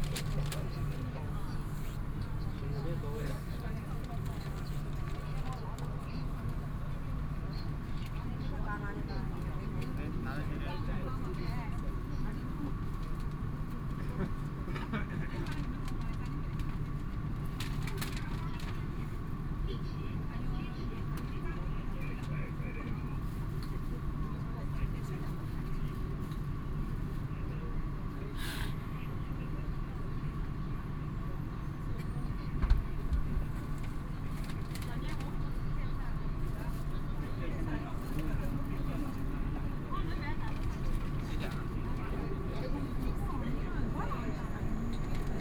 Huangpu District, Shanghai - Line 8 (Shanghai Metro)
from Laoxime Station to South Xizang Road Station, Binaural recording, Zoom H6+ Soundman OKM II
Shanghai, China, 30 November 2013